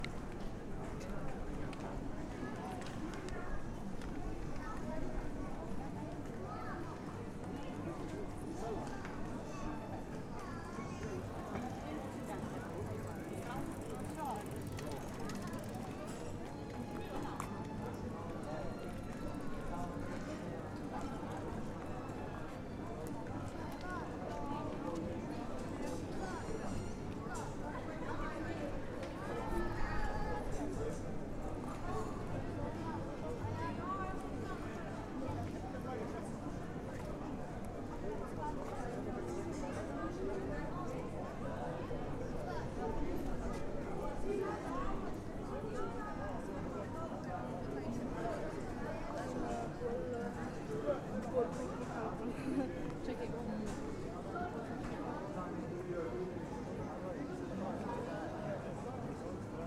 Vetrinjska ulica, Jurčičeva ulica, Maribor, Slovenia - corners for one minute
one minute for this corner - vetrinjska ulica and jurčičeva ulica